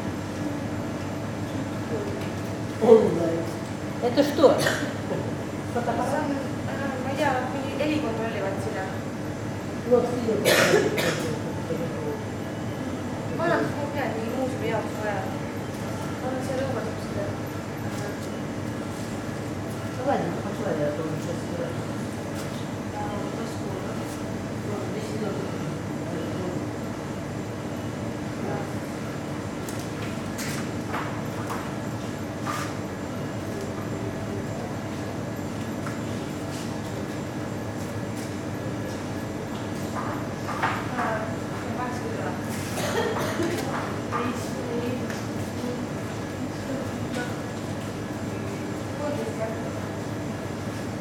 Mäetaguse Shop. Mäetaguse Estonia
sounds captured inside the local shop. recorded during the field work excursion for the Estonian National Museum.
Mäetaguse, Ida-Virumaa, Estonia, July 2010